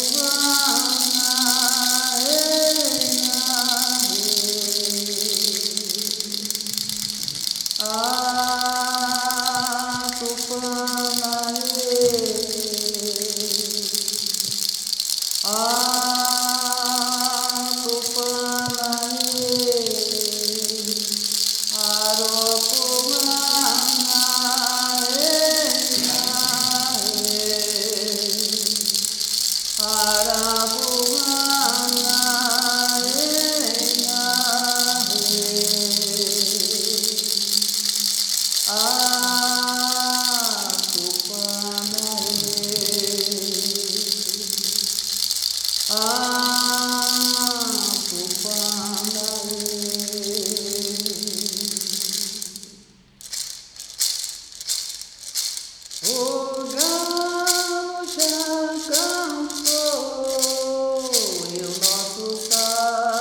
St Anna, Hamm, Germany - Analias prayer
Following the conference “Traditionally Sustainable” in Hofgeismar, a delegation of contributors from Brazil are guests of FUgE (Forum for Justice, Environment and Development) in Hamm. They meet with the “Heimatverein Heessen” for a conversation; and give a talk at FUgE Fairtrade Shop in the evening. With members of the “Heimatverein”, they visit the chapel of St. Anna. Analia A. da Silva from the Tuxa peoples performs a traditional prayer. Aderval Costa adds a prayer to Holy Mary in Latin. He writes: Anália Aparecida da Silva (Tuxá-Volk aus Pirapora am Fluss São Francisco) singt zu Beginn auf Truca und dann auf Portugiesische ein Gebet: Tupan, Gott der Indigenen, ist über all, der Hahn kündigt die Geburt des Retters für die Dorfbewohner, Kinder Jesus Christus. Anália sagt: Wir brauchen vor so vieler Ungerechtigkeit mehr Zusammenhalt. Der Rasseln, der Maracá, im Hintergrund soll dafür sorgen, dass nicht zuletzt unsere Ahnen uns hören.
28 June 2017, 11:59